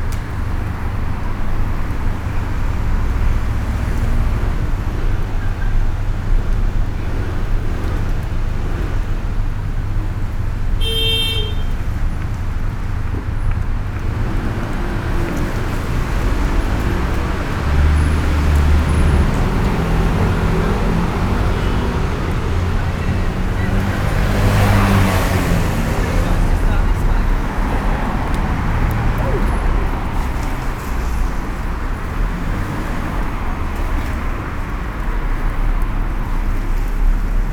Under the bridge carrying the railway . There are traffic lights here and frequent trains above.
Mix Pre 6 II with 2 x Sennheiser MKH 8020s
England, United Kingdom